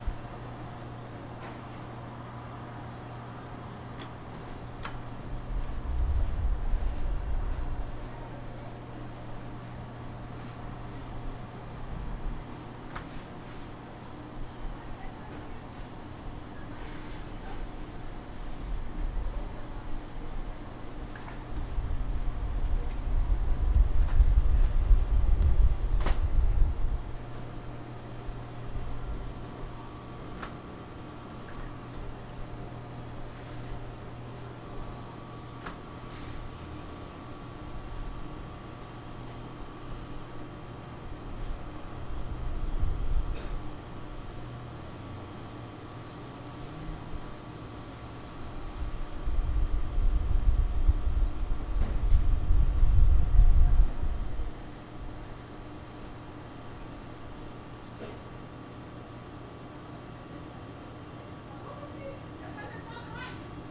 Manitou Ave, Manitou Springs, CO, USA - Restaurant Kitchen Atmosphere
kitchen shuffling, doors opening and closing. Faint sounds of people talking in Spanish. Appliance hums throughout recording. Talking gets louder, a woman chuckles. Middle of the day, so not too busy. More talking and cooking, pans clanking. Car pulls up behind the restaurant.